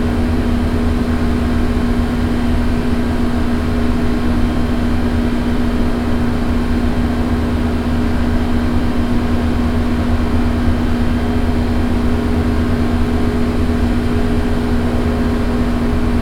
Austin, Crow Ln., Building air-conditioner
USA, Texas, Austin, Building air conditioner, Night, Binaural
TX, USA, 8 November 2011